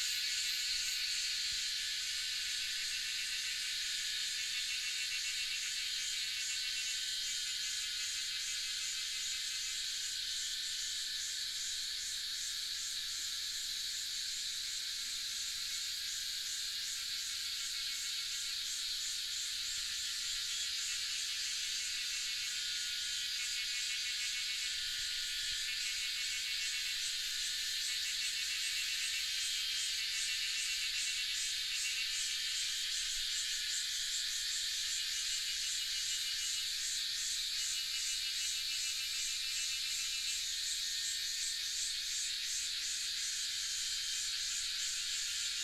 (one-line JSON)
{"title": "華龍巷, 南投縣魚池鄉 - Faced with the forest", "date": "2016-06-08 08:06:00", "description": "Faced with the forest, Cicada sounds", "latitude": "23.93", "longitude": "120.89", "altitude": "754", "timezone": "Asia/Taipei"}